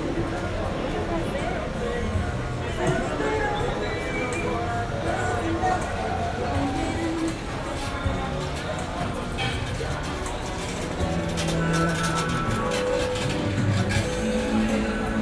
{"title": "Sao Paulo, cd-sellers with trolleys and audio equipement for cars", "latitude": "-23.54", "longitude": "-46.63", "altitude": "747", "timezone": "Europe/Berlin"}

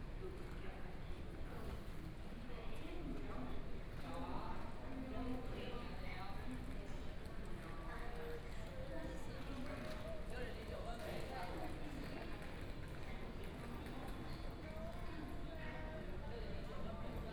{"title": "Chiayi Station, Taiwan High Speed Rail - At the station", "date": "2014-02-01 18:12:00", "description": "At the station, Zoom H4n+ Soundman OKM II", "latitude": "23.46", "longitude": "120.32", "timezone": "Asia/Taipei"}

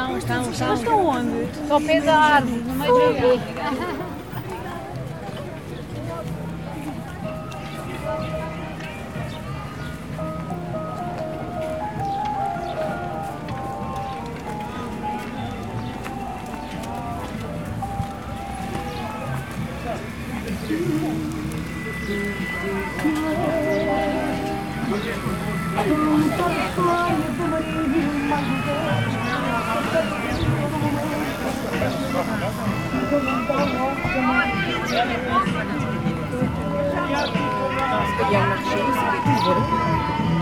{"title": "Lisbonne, Portugal - Feira da Ladra: flea market", "date": "2015-06-20 15:58:00", "description": "Feira da Ladra: flea market that takes place every Tuesday and Saturday in the Campo de Santa Clara (Alfama)", "latitude": "38.72", "longitude": "-9.13", "altitude": "59", "timezone": "Europe/Lisbon"}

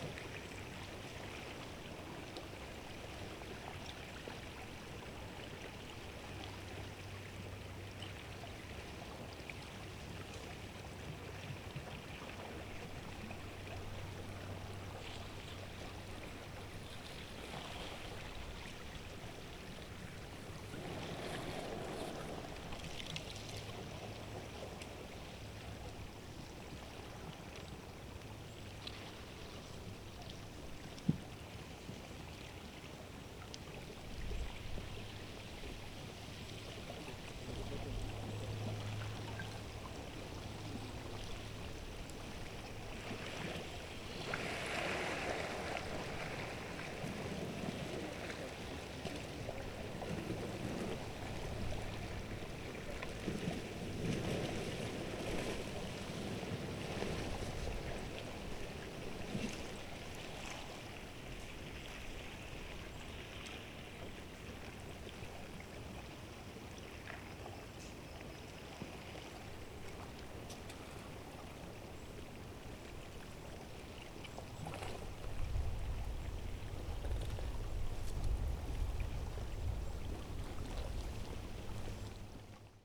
{"title": "Anykščiai, Lithuania, spring river - spring river", "date": "2012-03-17 16:45:00", "description": "River Sventoji, near Puntukas mythological stone. Watercourse is still frozen on this place, however you may hear how ice cracks under the spring sun", "latitude": "55.49", "longitude": "25.06", "altitude": "73", "timezone": "Europe/Vilnius"}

